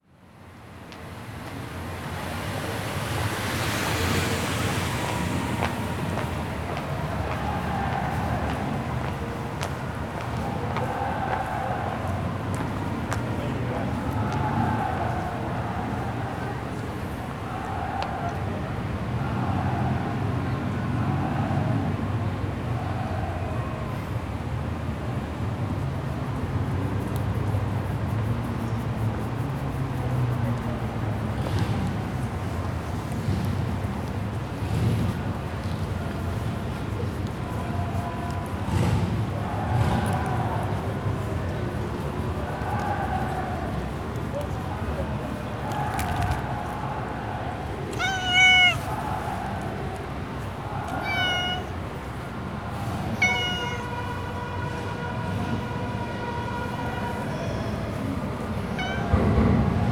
Porto, Calcada Serra - stray cat
city ambience on one of the streets of south Porto. cheering cry of visiting soccer fans reverberate among the houses. tram rattles on a bridge above. a cat comes along, demands to be pet.
Vila Nova de Gaia, Portugal, October 2013